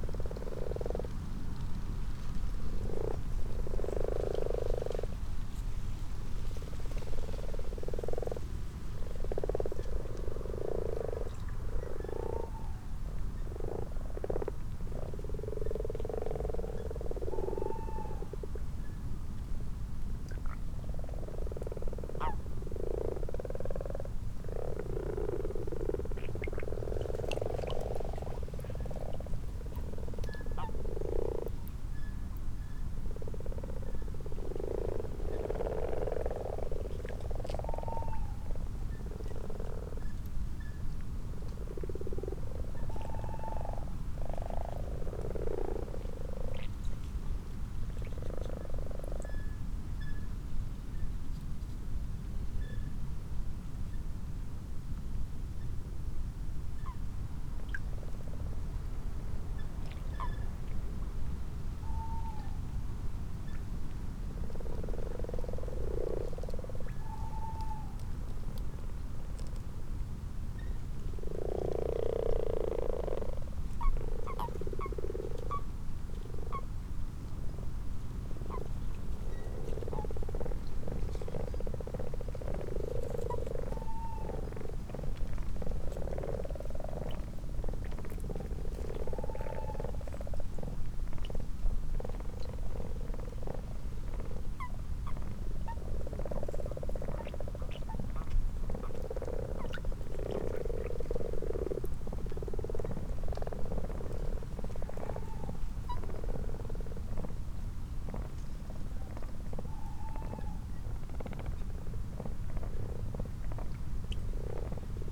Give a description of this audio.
common frogs and common toads ... xlr sass to zoom h5 ... time edited unattended extended recording ... bird call ... distant tawny owl ...